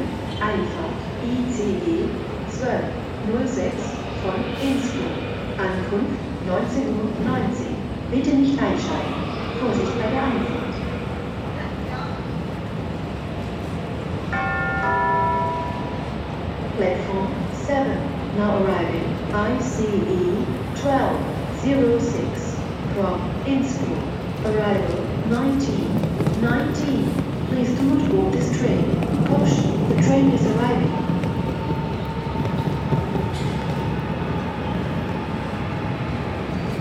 Moabit, Berlin, Deutschland - Berlin. Hauptbahnhof - ICE am Gleis 7
Standort: Gleis 7. Blick Richtung Gleis.
Kurzbeschreibung: Geräuschkulisse des Bahnhofs, Ansage, Einfahrt ICE, Fahrgäste, Abfahrt ICE.
Field Recording für die Publikation von Gerhard Paul, Ralph Schock (Hg.) (2013): Sound des Jahrhunderts. Geräusche, Töne, Stimmen - 1889 bis heute (Buch, DVD). Bonn: Bundeszentrale für politische Bildung. ISBN: 978-3-8389-7096-7